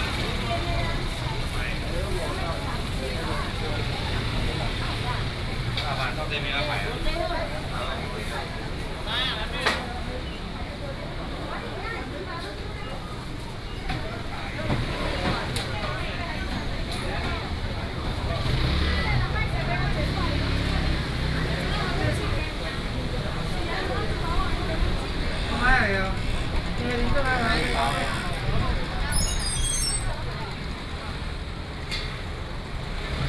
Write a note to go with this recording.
A variety of market selling voice, Traffic sound, Walking through the market